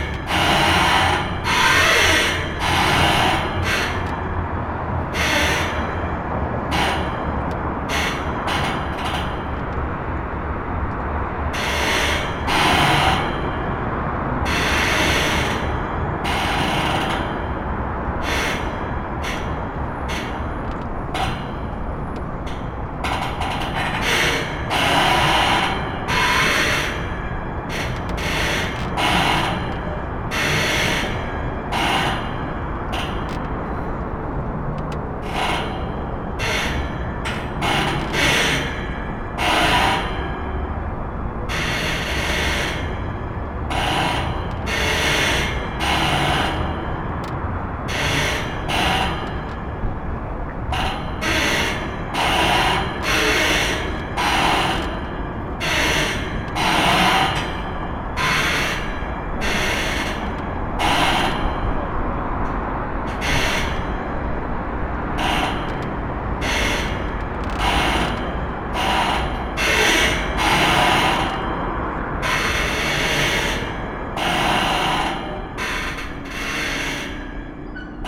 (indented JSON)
{
  "title": "København, Denmark - Pontoon creaking",
  "date": "2019-04-14 18:00:00",
  "description": "Sounds of a pontoon creaking at the mercy of the waves. It's near the most traveled bridge of the city.",
  "latitude": "55.67",
  "longitude": "12.58",
  "timezone": "GMT+1"
}